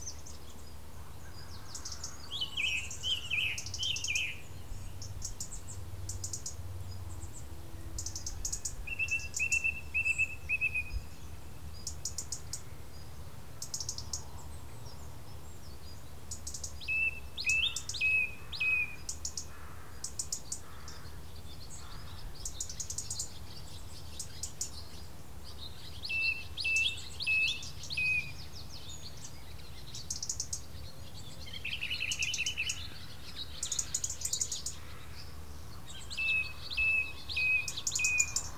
Hambledon Hill View Campsite, Hammoon, Sturminster Newton, UK - Early morning in the field
Faint sound of cars somewhere, plenty of birds, cock crowing and not much else.
22 July 2017